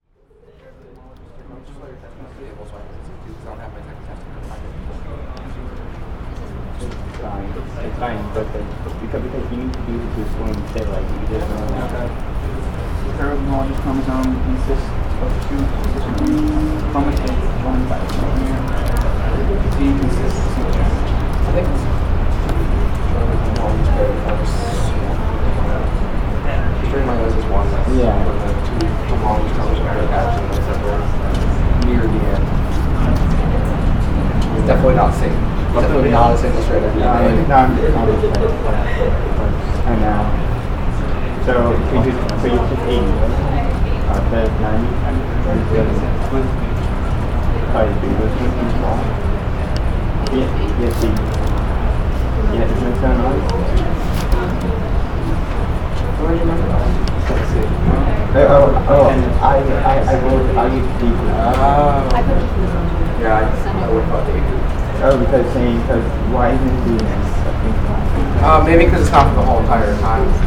Muhlenberg College, West Chew Street, Allentown, PA, USA - In the Red Door
Muhlenberg students studying for finals in a study space the student union.